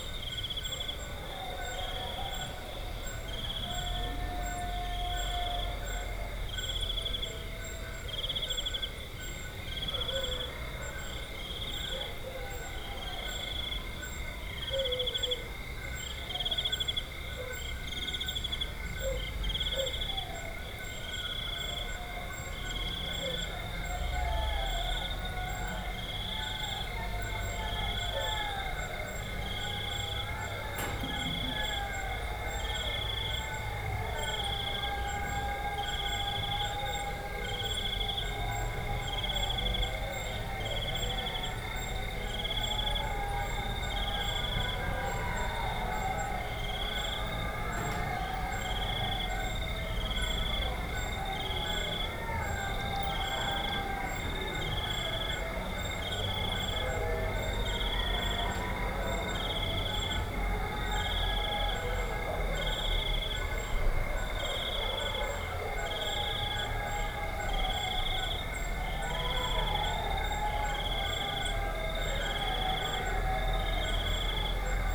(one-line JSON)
{"title": "Mission school guest house, Chikankata, Zambia - Chikankata school grounds at night", "date": "2018-09-04 21:40:00", "description": "listening out in to the night from the garden of the guest house; some festivities going on in the school grounds... we are spending just one night here as guests of Chiefteness Mwenda; it's a long journey out here; you can hardly make it back and forth in a day to Mazabuka...", "latitude": "-16.23", "longitude": "28.15", "altitude": "1253", "timezone": "Africa/Lusaka"}